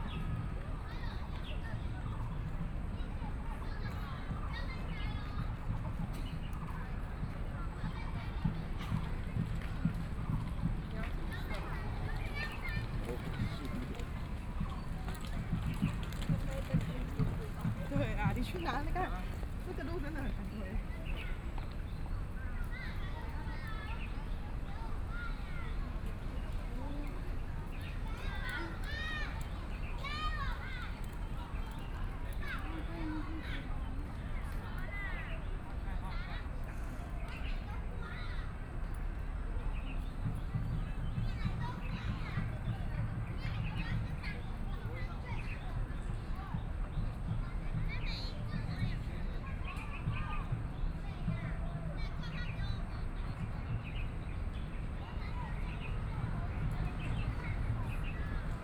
{"title": "Chiang Kai-shek Memorial Hall, Taipei City - Holiday in the Park", "date": "2014-03-30 15:59:00", "description": "Holiday in the Park", "latitude": "25.03", "longitude": "121.52", "altitude": "13", "timezone": "Asia/Taipei"}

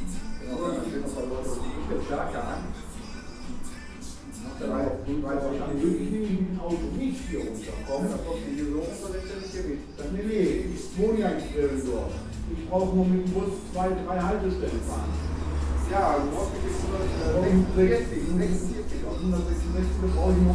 {"title": "Rellinghausen, Essen, Deutschland - zum ratskeller", "date": "2015-08-31 19:00:00", "description": "gaststätte zum ratskeller, sartoriusstr. 1, 45134 essen", "latitude": "51.43", "longitude": "7.04", "altitude": "83", "timezone": "Europe/Berlin"}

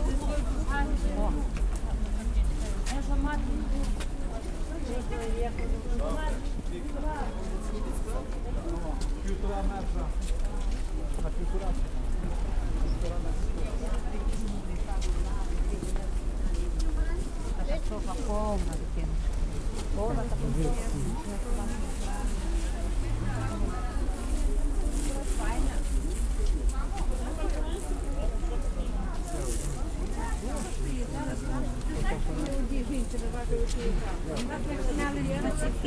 {"title": "Frankivskyi District, Lviv, Lviv Oblast, Ukraine - Pryvokzalnyi Market", "date": "2015-04-04 12:18:00", "description": "Stroll around the sidewalks surrounding the market, packed with vendors selling home-grown and -made produce. Binaural recording.", "latitude": "49.84", "longitude": "24.00", "altitude": "313", "timezone": "Europe/Kiev"}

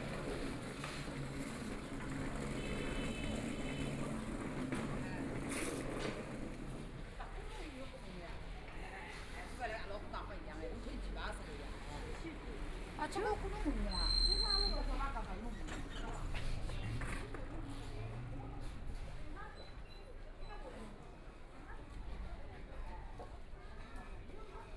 {"title": "Ningbo Road, Shanghai - Walking through the market", "date": "2013-11-25 16:30:00", "description": "Walking through the market, Various sounds on the street, Traffic Sound, Shopping street sounds, The crowd, Bicycle brake sound, Trumpet, Brakes sound, Footsteps, Bicycle Sound, Motor vehicle sound, Binaural recording, Zoom H6+ Soundman OKM II", "latitude": "31.24", "longitude": "121.47", "altitude": "7", "timezone": "Asia/Shanghai"}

